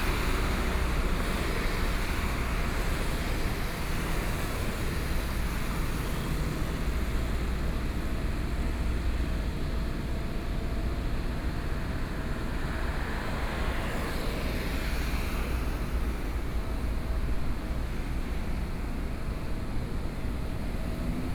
Jiangxia Rd., Su’ao Township - Traffic noise
Rainy Day, The sound from the vehicle, Cement plant across the road noise, Zoom H4n+ Soundman OKM II
Yilan County, Taiwan